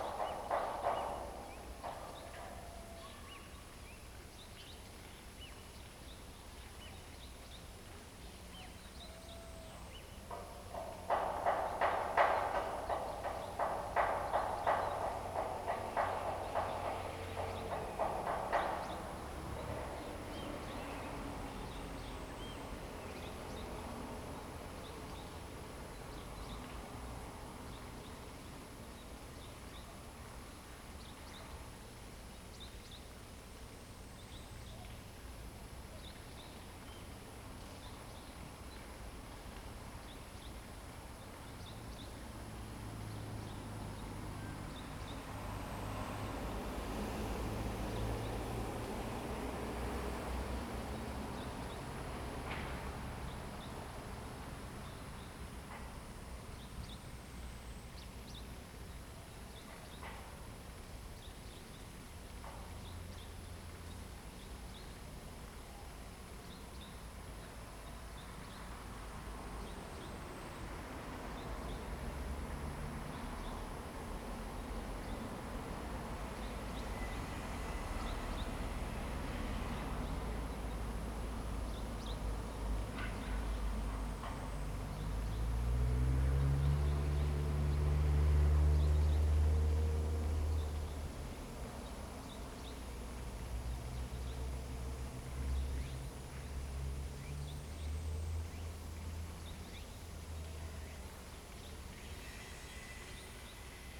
糯米橋, Guanxi Township, Hsinchu County - On the old bridge
On the old bridge, traffic sound, Bird call, The sound of the construction percussion, ambulance
Zoom H2n MS+XY